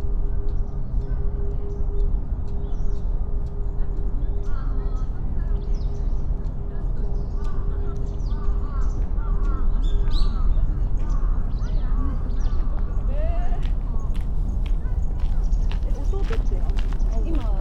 hama-rikyu gardens, tokyo - gardens sonority
Tokyo, Japan, 14 November 2013, ~14:00